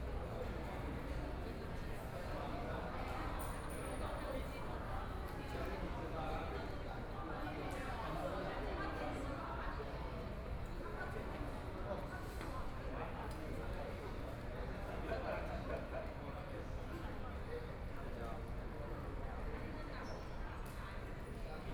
Yilan Station, Taiwan - On the platform
On the platform waiting for the train, Station broadcast messages, Trains arrive at the station, Binaural recordings, Zoom H4n+ Soundman OKM II
Yilan County, Taiwan, 8 November 2013, 12:03